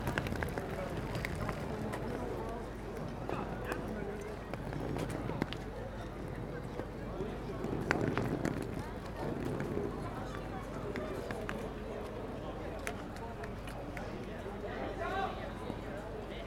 Bahnhofsbrücke, Kiel, Deutschland - Street life
Summer street life at the pedestrian area at the harbour, people walking and talking, cries of seagulls. iPhone 6s Plus with Shure Motiv MV88 microphone in 120° stereo mode.
Kiel, Germany, 5 August 2017